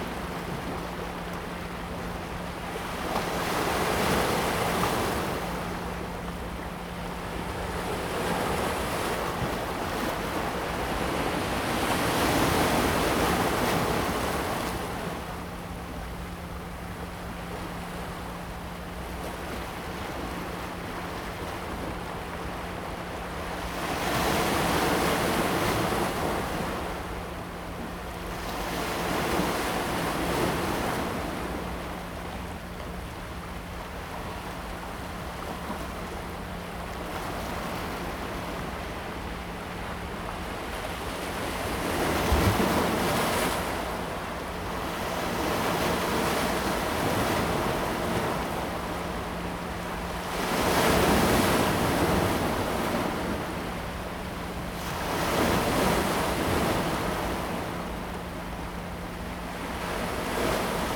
On the banks of the river
Zoom H2n MS+XY